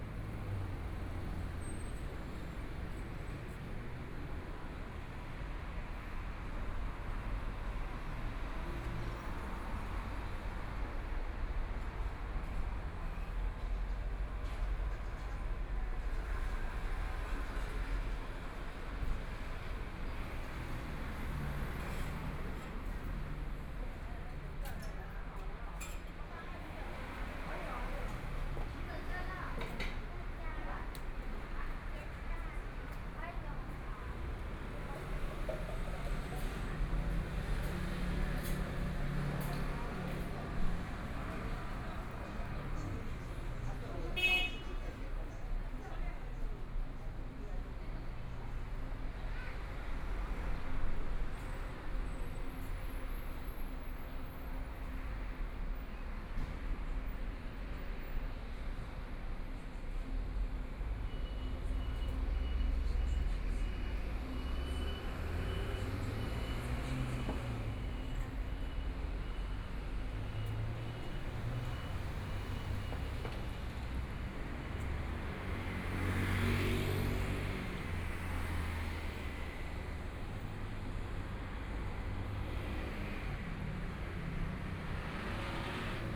walking on the Road, Sound various shops and restaurants, Traffic Sound
Please turn up the volume
Binaural recordings, Zoom H4n+ Soundman OKM II
Hualian City, Hualien County, Taiwan